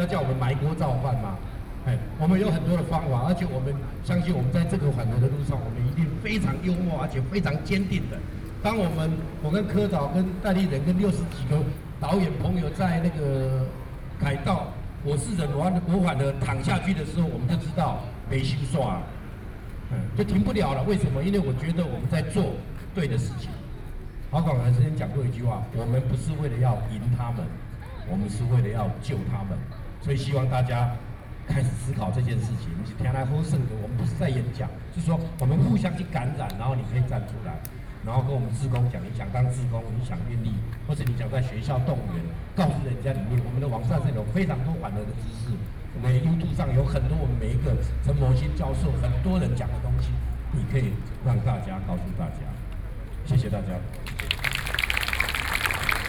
Opposition to nuclear power
Binaural recordings